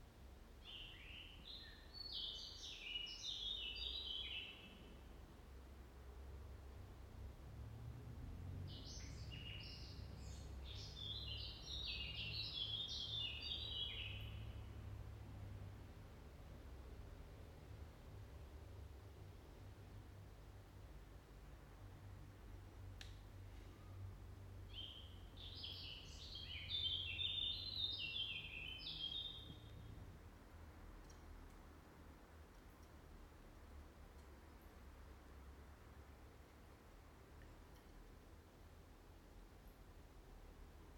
Abandoned camp. The building with dining-room. Scaterred trash, broken windows...
Klaipėdos apskritis, Lietuva, 21 July